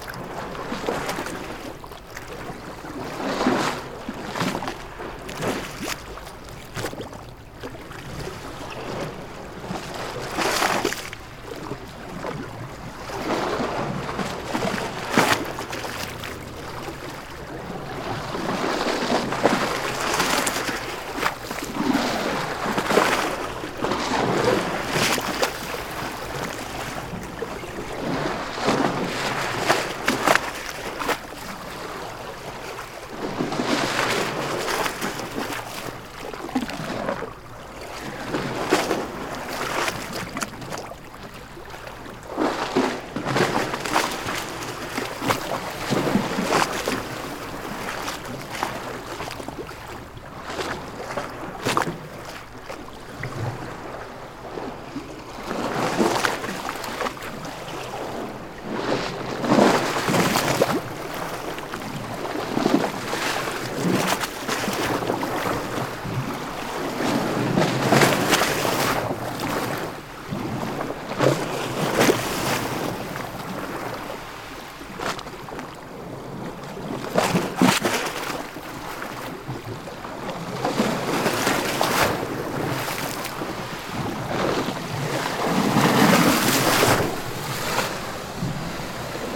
Lisbon, Portugal - Waves - World Listening Day 2015 - H2O
World Listening Day 2015 - waves splashing on water near Cais do Sodré harbour, Lisbon. Recorded in MS stereo with a Shure VP88 and a Tascam dr70-d.
Lisboa, Portugal, 19 July 2015, ~02:00